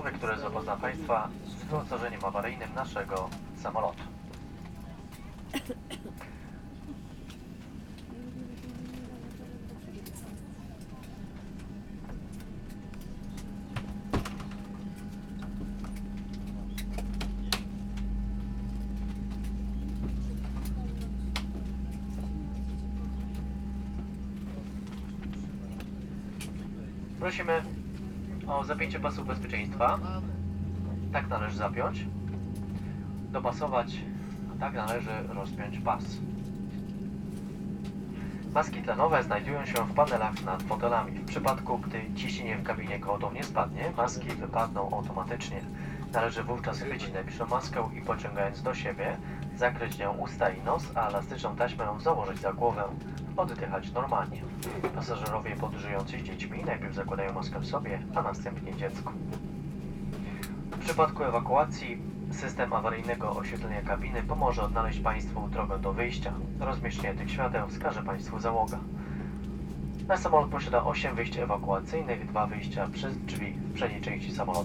Poznan, Lawica Airport, runway - awaiting for takeoff
right after boarding a boeing 373. the pilot greets the passengers, gives details about the flight, explains safety instructions. excited conversations of those who fly for the first time and those who foresee the plane crashing. clinking safety belt buckles, gushing jet engines.
a lady coughing - she infected me with a bad clod eventually. interesting to have a recording of moment of being infected.
Bukowska, Poznań, Poland